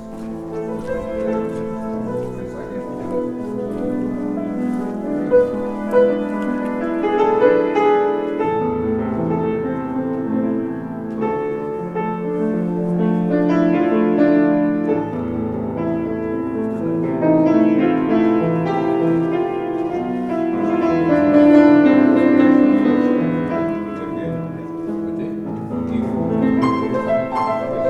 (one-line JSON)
{"title": "Lithuania, Utena, opening of exhibition in local cultural centre", "date": "2010-11-05 17:05:00", "description": "piano playing in the Utena cultural centre at some exhibition opening", "latitude": "55.51", "longitude": "25.60", "altitude": "109", "timezone": "Europe/Vilnius"}